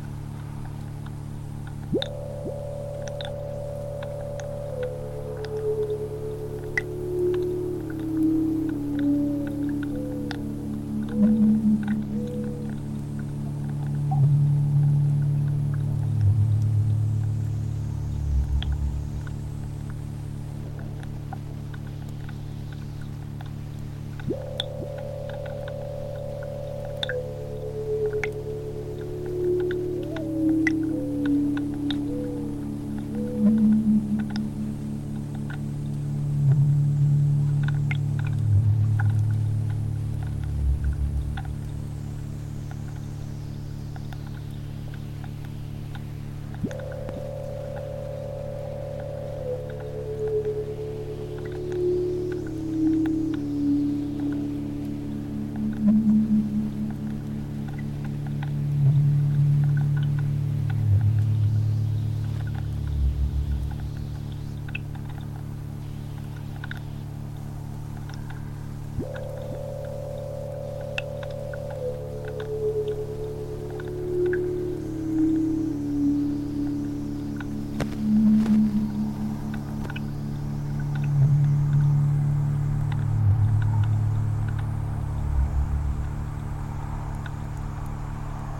Wave Farm, Acra, NY, USA - Wave Farm streams
Mix of live streams and open mics at Wave Farm in New York's Upper Hudson Valley made on the morning of March 24 including Soundcamp's Test Site of the Acoustic Commons 1, Zach Poff's Pond Station, and Quintron's Weather Warlock.
New York, United States of America, 24 March 2020, 09:30